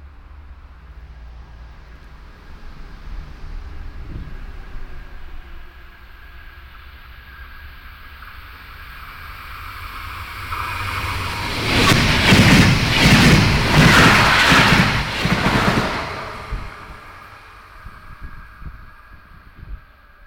erkrath-hochdahl, hochdahlerstr, bahngleise
passierender zug an deutschlands steilstem streckenanstieg, mittgas
- soundmap nrw
project: social ambiences/ listen to the people - in & outdoor nearfield recordings